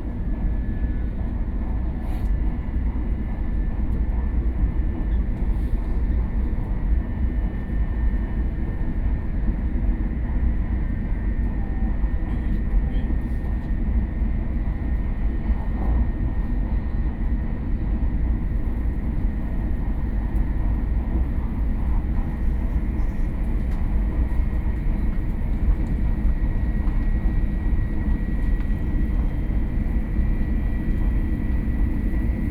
Yangmei City, Taoyuan County - High-speed rail train
inside the High-speed rail train, Sony PCM D50 + Soundman OKM II
桃園縣 (Taoyuan County), 中華民國, 12 May 2013, ~19:00